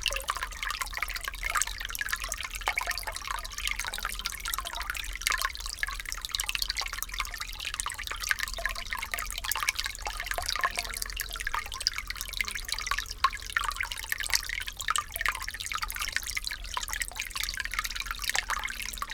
Kupiškis, Lithuania, stramlet
stramlet at the hillfort
Panevėžio apskritis, Lietuva, September 2022